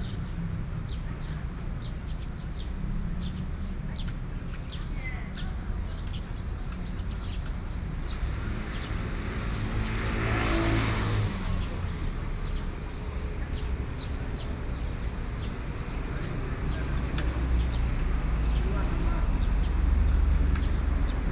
Wenchang Park - Hot noon
Hot noon, in the Park, Sony PCM D50 + Soundman OKM II